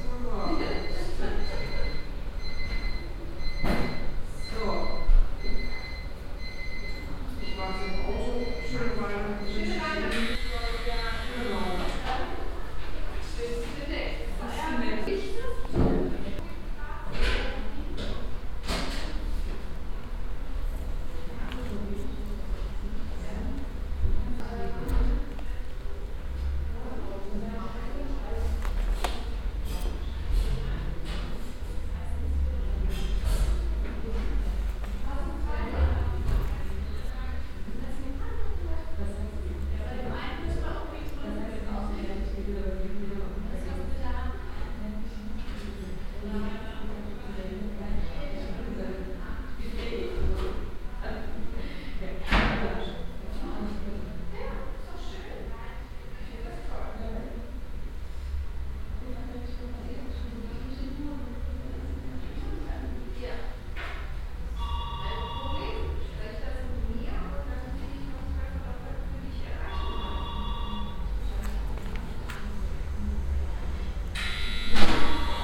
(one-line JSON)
{"title": "cologne, marienburg, dentist waiting room", "date": "2011-11-16 15:26:00", "description": "Inside a dentist's waiting room. The sound of people talking in a reverbing room atmosphere, beeping signal from a machine, telephone and doorbells, the receptionists talking to patients, a patient leaving.\nsoundmap nrw - social ambiences and topographic field recordings", "latitude": "50.89", "longitude": "6.97", "altitude": "56", "timezone": "Europe/Berlin"}